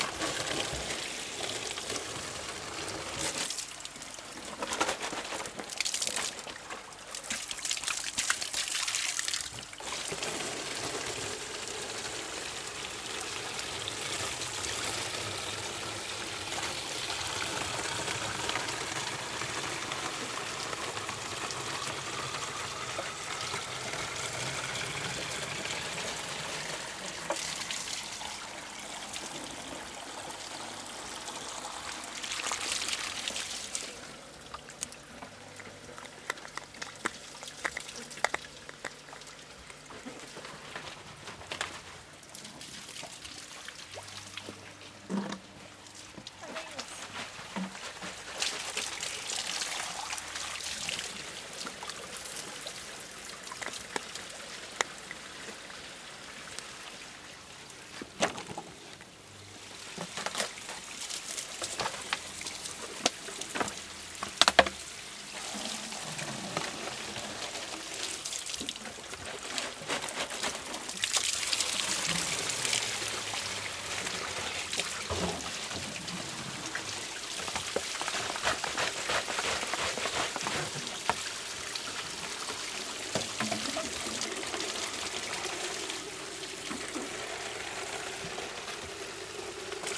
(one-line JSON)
{"title": "R. Emídio Navarro, Luso, Portugal - A family collects natural water from a spring in Luso", "date": "2022-04-14 22:20:00", "description": "A family collects natural water from a spring of superficial origin that runs through the Fountain of St. John in Luso, Portugal.\nThey fill several plastic bottles with Luso's water to consume at home.", "latitude": "40.38", "longitude": "-8.38", "altitude": "208", "timezone": "Europe/Lisbon"}